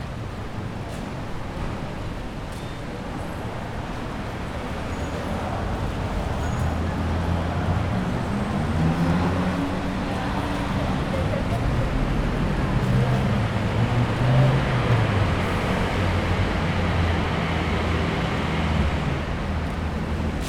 neoscenes: walk to Parliament Station